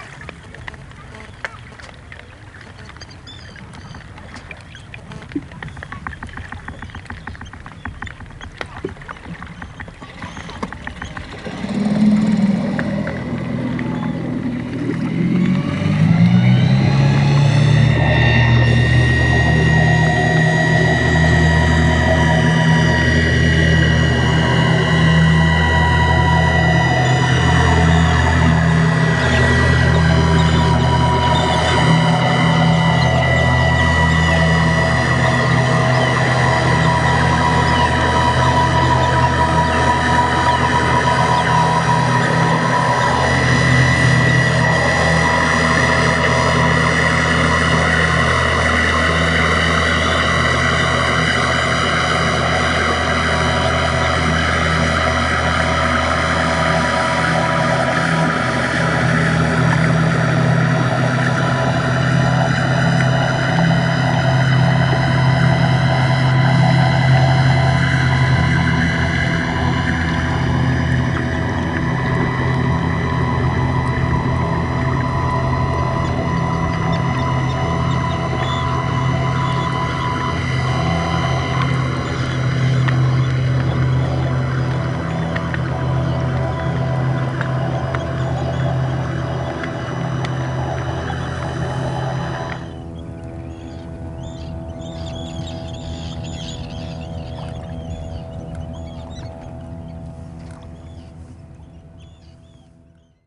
Isle of Eigg - Sitting In an Eigg: Birds & Children Against Propellers
Recorded with a stereo pair of DPA 4060s and a Sound Devices MixPre-3
UK, 3 July 2019